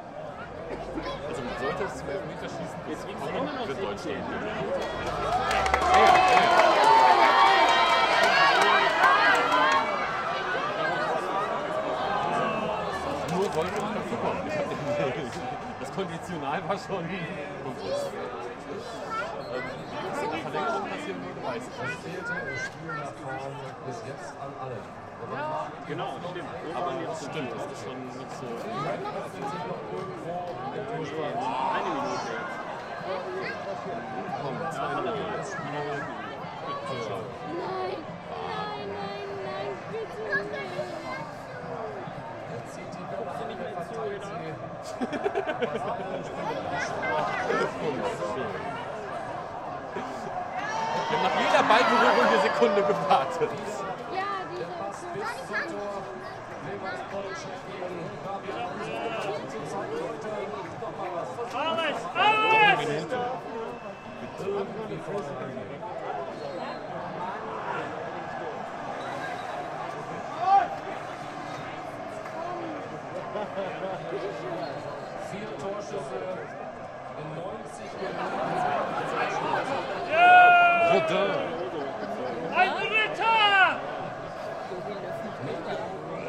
berlin, ohlauer straße: fanmeile - the city, the country & me: germany - spain 0:1 - spain wins european championship after 44-year wait
the city, the country & me: june 29, 2008